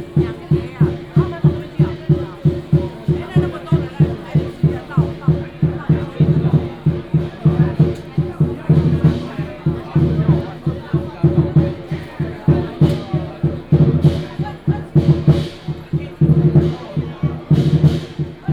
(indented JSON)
{
  "title": "拱天宮, 苗栗縣通霄鎮 - In the square of the temple",
  "date": "2017-03-09 10:30:00",
  "description": "In the square of the temple",
  "latitude": "24.57",
  "longitude": "120.71",
  "altitude": "6",
  "timezone": "Asia/Taipei"
}